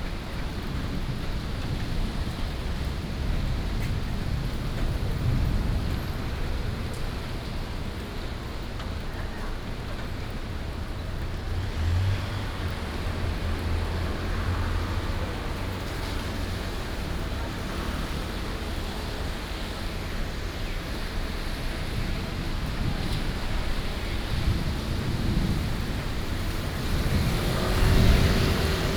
Thunderstorms, Traffic Sound, At the junction
Keelung City, Taiwan, 18 July 2016